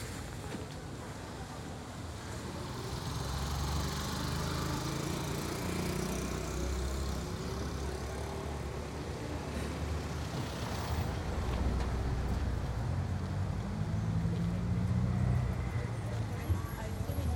Ibagué, Ibagué, Tolima, Colombia - Ibagué deriva sonora02
Ejercicio de deriva sonora por el centro de Ibagué.
Punto de partida: Concha Acústica
Soundwalk excercise throughout Ibagué's dowtown.
Equipment:
Zoom h2n stereo mics Primo 172.
Technique: XY